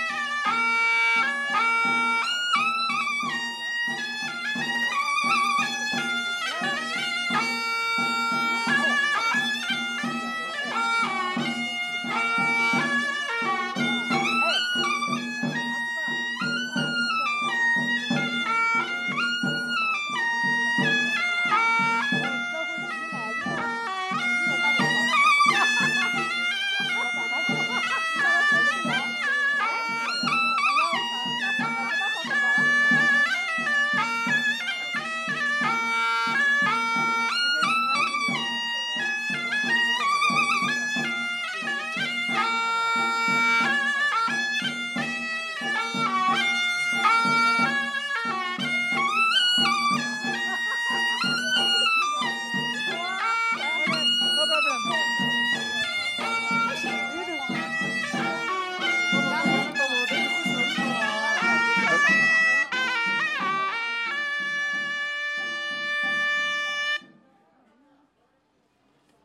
Unnamed Road, Dali Shi, Dali Baizuzizhizhou, Yunnan Sheng, China - xizhouzhen
it is the village gods birthday today. people go for blessing and celebration.
云南省, 中国